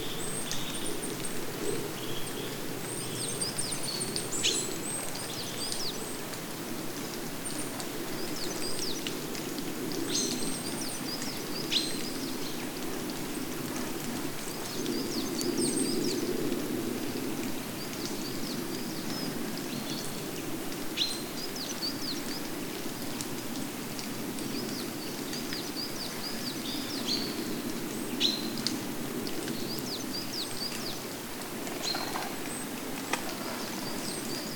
Reinhardswald, Rundweg Nr 6, Schneiders Baum, Zapfen knacken in der Sonne
Reinhardswald, Rundweg Nr 6 von Schneiders Baum, Zapfen knacken in der Sonne, fir cones crackling in the sun
gemeindefreies Gebiet, Germany, 2 October 2011